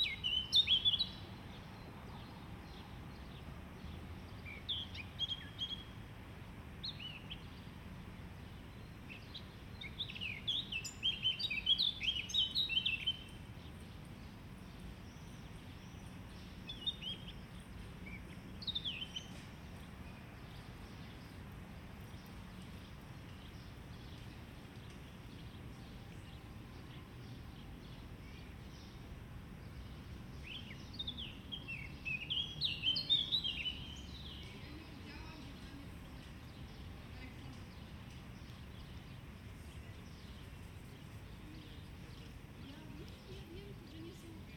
Ogród Saski, Warszawa, Polska - A grove in the Saxon Garden
A groven in the Saxon Garden in Warsaw - chirping birds - starlings - crows - distant cars and trams - distant people talking
Recording made with Zoom H3-VR, converted to binaural sound